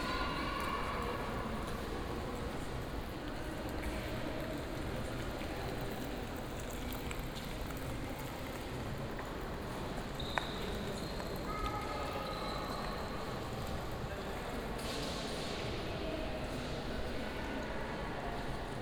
Madrid, Parque del Retiro, Crystal Palace - trailer instalation
(binaural) airy ambience of the Cristal palace. perplexed visitors looking at and discussing an art installation located in the middle of the hall.